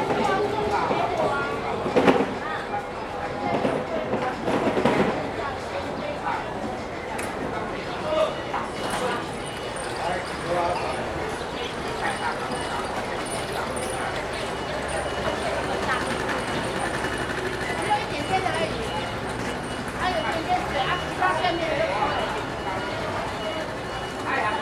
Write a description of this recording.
Fruits and vegetables wholesale market, Sony Hi-MD MZ-RH1 +Sony ECM-MS907